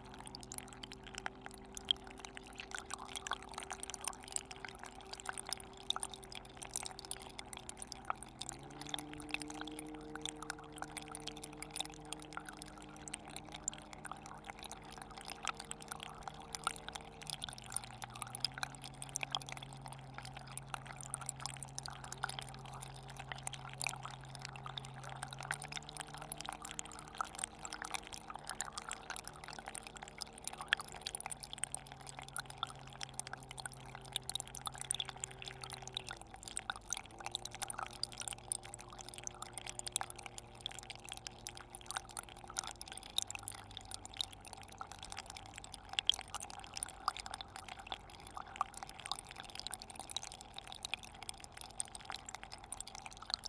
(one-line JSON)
{"title": "Loix, France - Small stream", "date": "2018-05-20 15:45:00", "description": "A small stream, during a very low tide on the beach of Loix. Just near is fort du Grouin, an old bunker converted to a house now.", "latitude": "46.23", "longitude": "-1.41", "timezone": "Europe/Paris"}